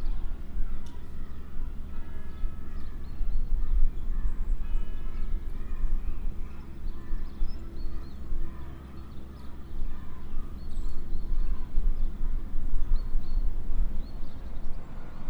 Rue du Stade, Piney, France - Collège des Roises - Rue principale
Rue principale du collège des Roises (Piney)